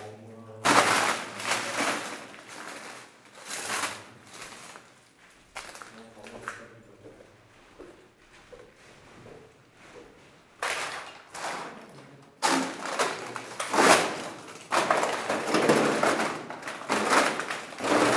Audun-le-Tiche, France - Calcite tunnel
In a completely forgotten tunnel in an underground mine, walking in a very thick layer of calcite. Walking there is breaking calcite and this makes harsh noises.